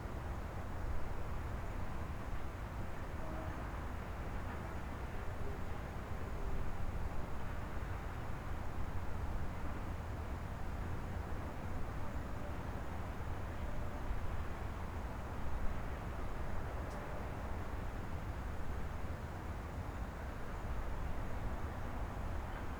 Castle Peak, Tuen Mun, Hong Kong - Castle Peak
Castle Peak is 583m high, located in Tuen Mun, Western part of New Territories. It is one of the "Three Sharp Peaks of Hong Kong", together with Sharp Peak and High Junk Peak. A television broadcasting principal transmitting station can be found at its peak. You can hear the traffic sounds far away from the soundless peak.
青山海拔583米高，位於香港新界西部屯門區，與釣魚翁山和蚺蛇尖合稱「香港三尖」。其主峰頂有香港數碼地面電視廣播兼模擬電視廣播發射站。在山頂的無聲，使你能聽到遠處公路的聲音。
#Cricket, #Construction, #Traffic
香港 Hong Kong, China 中国, 30 January, 13:23